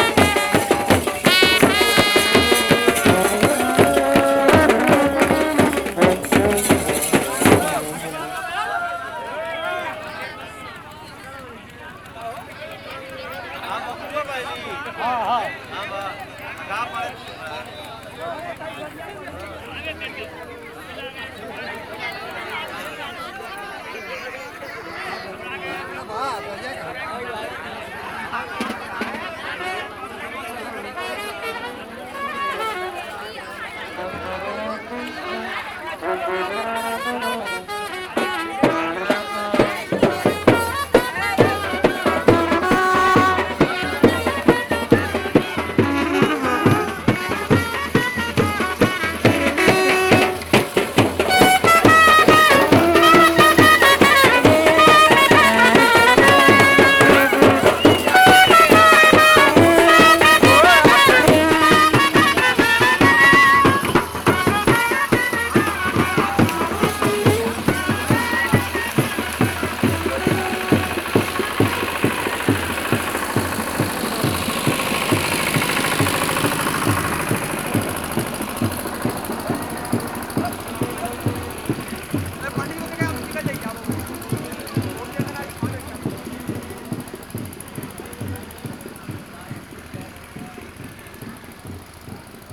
{
  "title": "Ghats of Varanasi, Ghasi Tola, Varanasi, Uttar Pradesh, Indien - wedding procession",
  "date": "1996-02-12 22:31:00",
  "description": "The recording catches a wedding procession late in the evening on the banks of the Ganges.\nA generator was carried for the electric light decoration.",
  "latitude": "25.32",
  "longitude": "83.02",
  "altitude": "70",
  "timezone": "Asia/Kolkata"
}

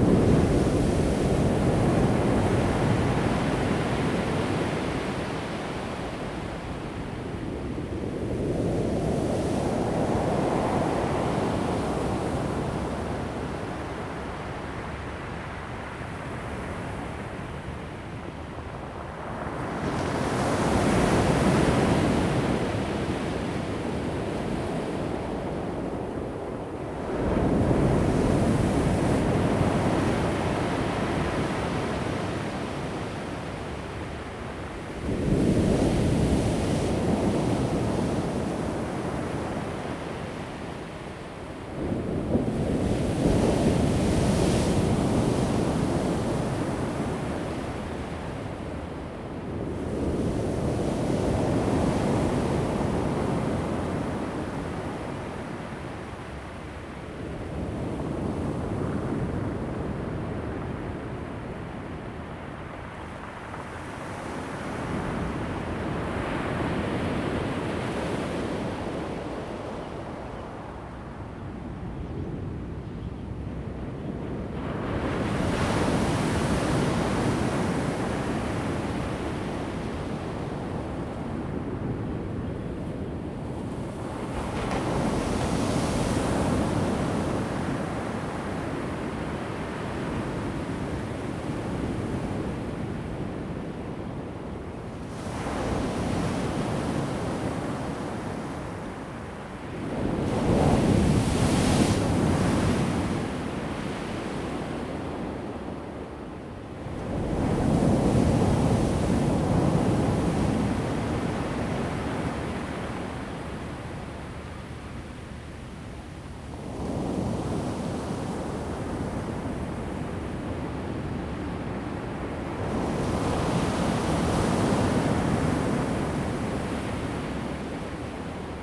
{
  "title": "太麻里海邊, 台東縣太麻里鄉 - sound of the waves",
  "date": "2018-03-14 07:00:00",
  "description": "At the beach, Sound of the waves\nZoom H2n MS+XY",
  "latitude": "22.61",
  "longitude": "121.01",
  "timezone": "Asia/Taipei"
}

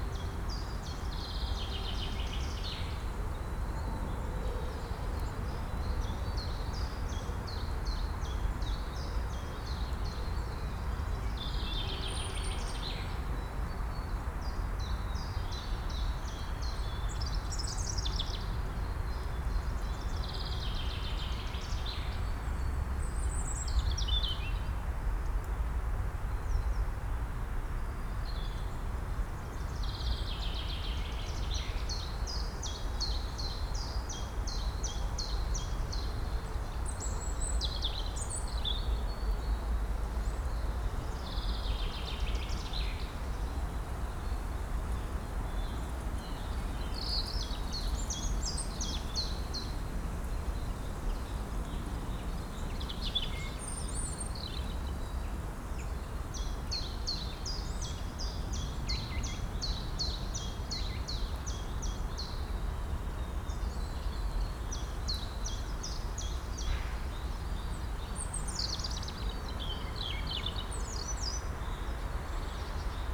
{
  "title": "Am Sandhaus, Berlin-Buch, Deutschland - forest ambience /w drone",
  "date": "2019-03-30 13:05:00",
  "description": "forest ambience, drone appears, near former GDR/DDR government hospital\n(Sony PCM D50, DPA4060)",
  "latitude": "52.64",
  "longitude": "13.48",
  "altitude": "60",
  "timezone": "Europe/Berlin"
}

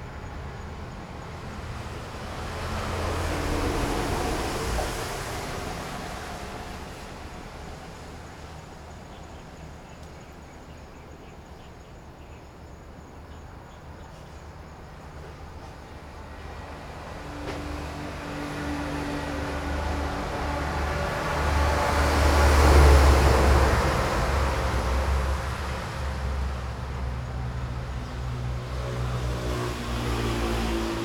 {
  "title": "頭城鎮石城里, Yilan County - Train traveling through",
  "date": "2014-07-29 17:16:00",
  "description": "Train traveling through, Beside the railway track, Very hot weather, Traffic Sound\nZoom H6+ Rode NT4",
  "latitude": "24.98",
  "longitude": "121.95",
  "altitude": "13",
  "timezone": "Asia/Taipei"
}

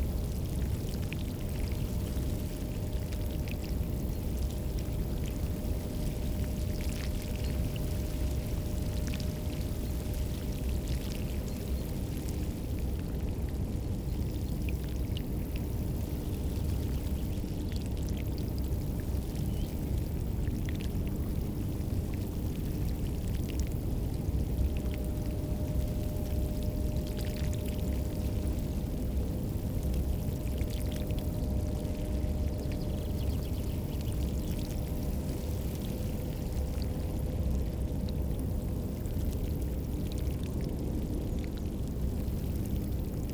{
  "title": "Beveren, Belgium - In the wetlands",
  "date": "2018-08-04 10:11:00",
  "description": "Feet in the water, sound of the reed and the wetlands of the Schelde river.",
  "latitude": "51.32",
  "longitude": "4.27",
  "altitude": "2",
  "timezone": "GMT+1"
}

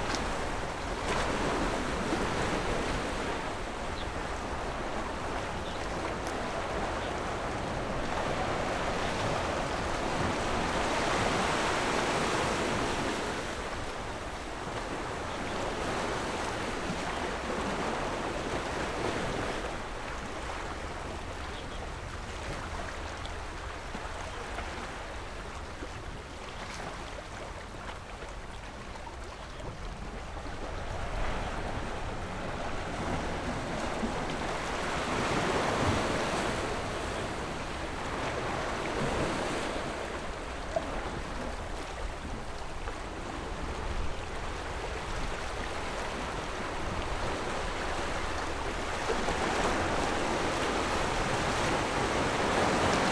{
  "title": "Beigan Township, Matsu Islands - the waves",
  "date": "2014-10-13 14:54:00",
  "description": "Sound of the waves, Small port, Pat tide dock\nZoom H6 +Rode NT4",
  "latitude": "26.20",
  "longitude": "119.97",
  "altitude": "14",
  "timezone": "Asia/Taipei"
}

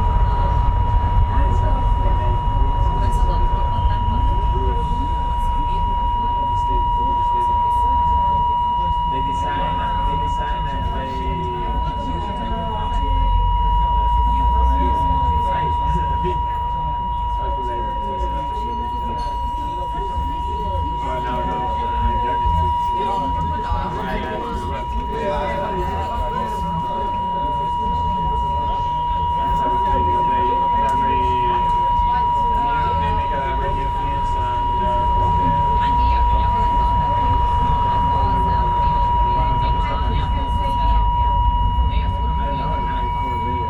{
  "title": "berlin: friedelstraße - the city, the country & me: broken intercom system",
  "date": "2012-06-21 23:21:00",
  "description": "the city, the country & me: june 21, 2012",
  "latitude": "52.49",
  "longitude": "13.43",
  "altitude": "46",
  "timezone": "Europe/Berlin"
}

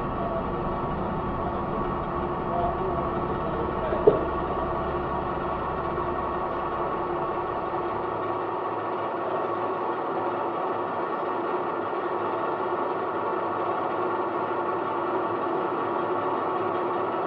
{
  "title": "Tufo, The Train Between Altavilla and Benevento, Italy - sounds from the traintrip",
  "date": "2012-07-03 16:06:00",
  "description": "The beautiful train line between Benevento and Avellino in the rural area of Irpina is threatened to be shut down in October 2012. Also the line between Avellino and Rocchetta is facing its end. The closing of the rail lines is a part of a larger shut down of local public transport in the whole region of Campania. These field recordings are from travels on the train between Benevento-Avellino and are composed as an homage to the Benevento-Avellino -and Avellino-Rocchetta line.\nRecorded with contact mic, shotgun and lavalier mics.",
  "latitude": "41.01",
  "longitude": "14.82",
  "altitude": "229",
  "timezone": "Europe/Rome"
}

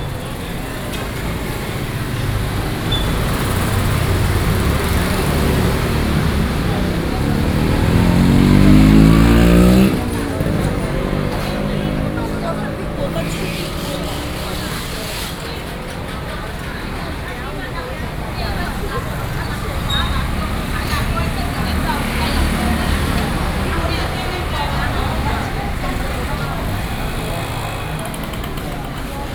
{
  "title": "Zhongxing Rd., Banqiao Dist., New Taipei City - Walking in the traditional market",
  "date": "2012-06-17 07:50:00",
  "description": "Walking through the traditional market\nSony PCM D50+ Soundman OKM II",
  "latitude": "25.01",
  "longitude": "121.46",
  "altitude": "17",
  "timezone": "Asia/Taipei"
}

